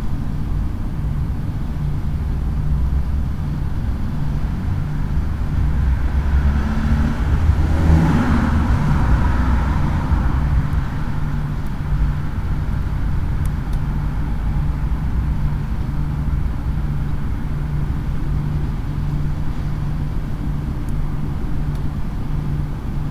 UniGardening HU Adlershof, Newtonstraße, Berlin, Germany - Noise pollution by chemistry building at uni gardening
Sitting on a bench in front of the green house with right ear to the chemistry building and left ear facing to the street.
Recorder: Tascam DR-05